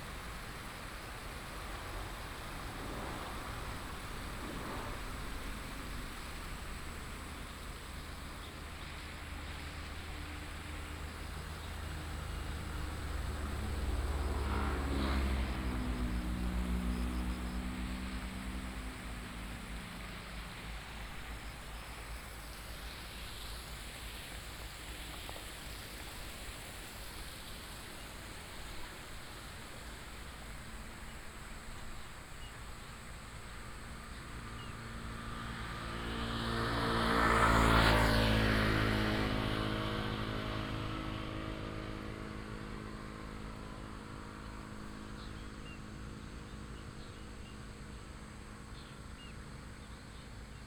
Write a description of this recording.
birds call, stream, Traffic sound